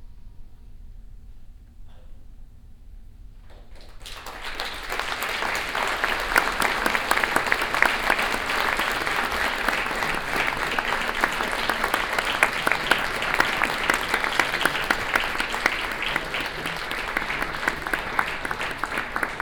inside the ranga shankar theatre during the attakkalari festival - here: applaus after a performance
international city scapes - social ambiences, art spaces and topographic field recordings
Bengaluru, Karnataka, India, 15 February 2011